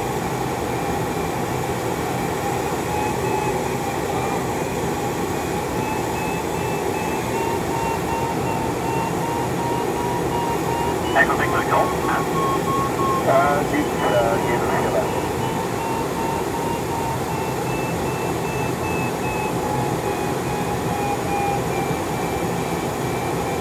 Hasselt, Belgium - gliding flight
recording of a gliding flight around kievit airport (Hasselt) in aircraft Twin Astir II. Recorded with zoom H5 This recording has been edited to a 15min. piece.